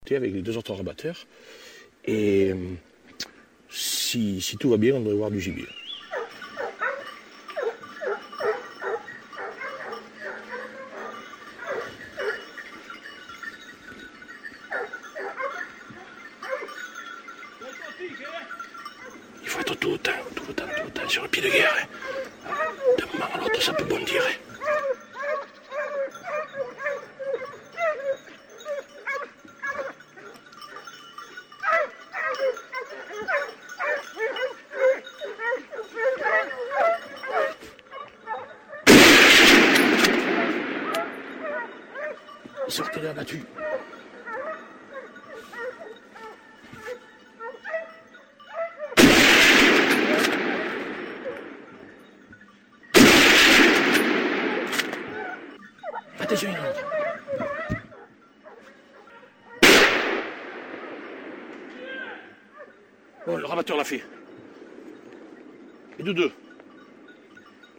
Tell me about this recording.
Une battue organisée sous le mont Julien, on entend les chiens qui pistent une demie douzaine de sangliers. A beat organized under the mount Julien, one hears the dogs that track half a dozen wild boars.